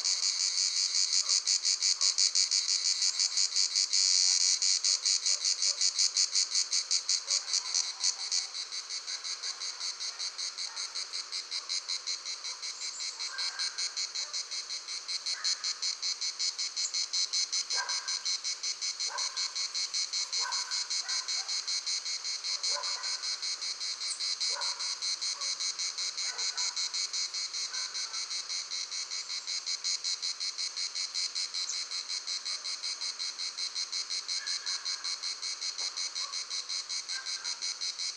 sorgue, in the fields, crickets and bells
In the fields nearby Sorgue on an early summer afternoon. The sound of crickets chnaging with a winf movemnt and the bells of two cows that stand nearby on a grass field.
International topographic field recordings, ambiences and scapes
26 August, 16:09